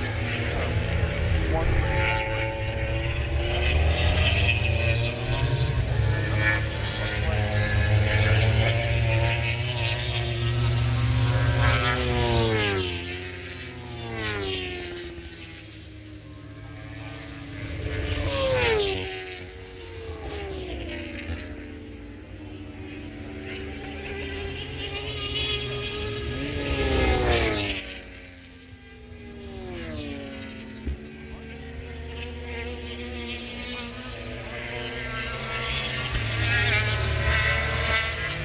Silverstone Circuit, Towcester, UK - british motorcycle grand prix 2013 ...
motogp fp1 2013 ...